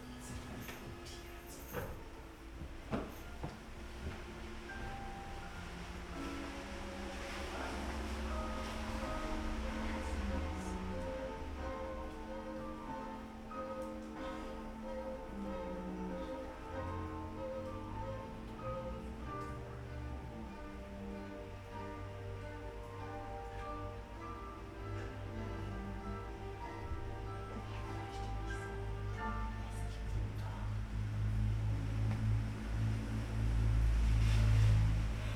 radio aporee - quiet evening
a quiet evening, sounds from the street, something is present. beethoven trio playing in the back.
Berlin, Deutschland, 17 July 2011, ~22:00